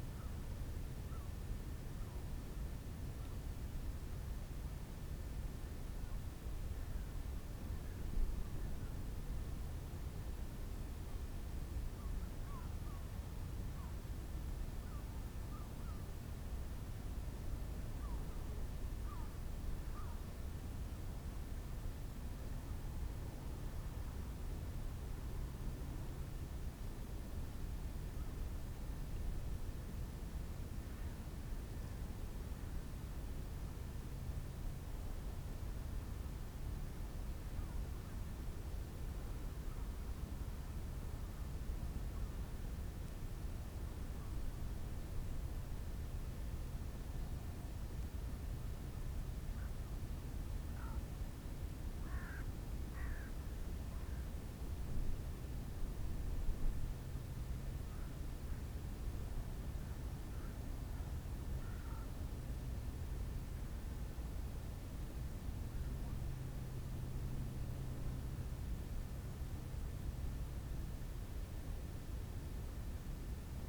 klein zicker: ehemalige sowjetische militärbasis - the city, the country & me: former soviet military base
cold winter day, quiet ambience of the former soviet military base
the city, the country & me: march 6, 2013
Vorpommern-Rügen, Mecklenburg-Vorpommern, Deutschland, 6 March 2013